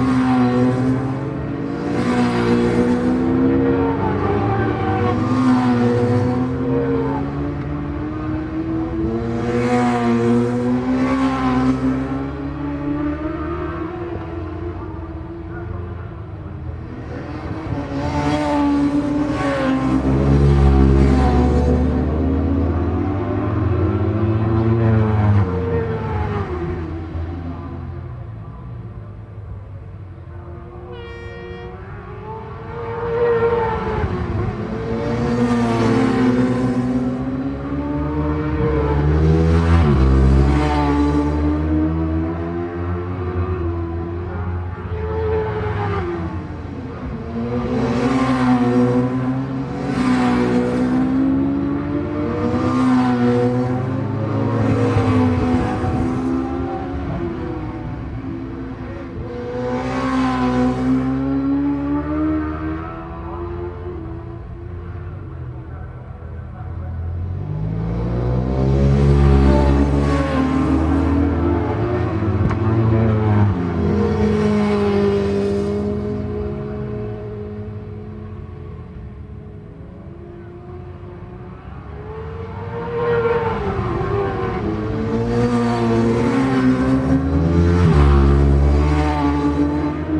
Leicester, UK - british superbikes 2002 ... superbikes ...
british superbikes 2002 ... superbikes qualifying ... mallory park ... one point stereo mic to minidisk ... date correct ... no idea if this was am or pm ..?
England, United Kingdom